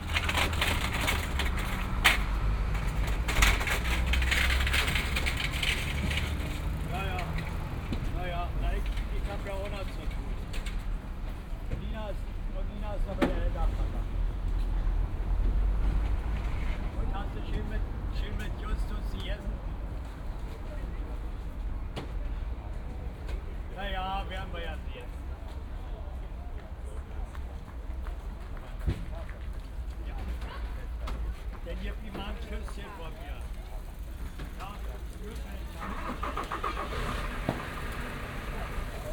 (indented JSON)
{
  "title": "maybachufer, markt, eingang",
  "date": "2008-09-09 19:50:00",
  "description": "09.09.2008 19:50\nWochenmarkt, Ein-/Ausgang Ost, Aufräumarbeiten, Ordner regelt Verkehr.\nmarket entry east, cleanup, man regulates traffic",
  "latitude": "52.49",
  "longitude": "13.42",
  "altitude": "42",
  "timezone": "Europe/Berlin"
}